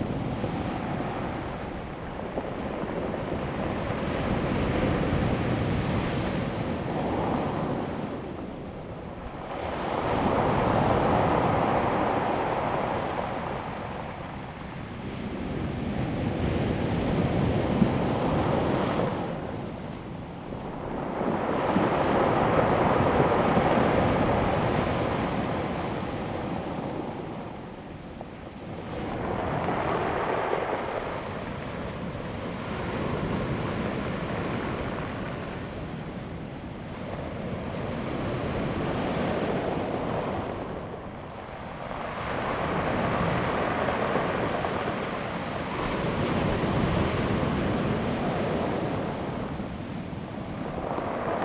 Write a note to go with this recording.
Sound of the waves, Circular stone coast, Zoom H2n MS +XY